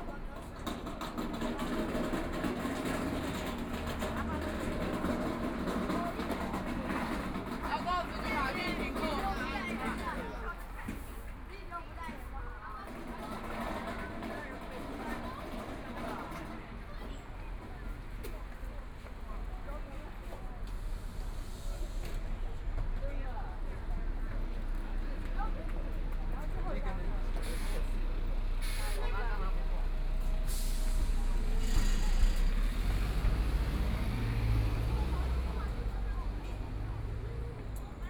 South Station Road, Shanghai - on the road
Traffic Sound, Line through a variety of shops, Binaural recording, Zoom H6+ Soundman OKM II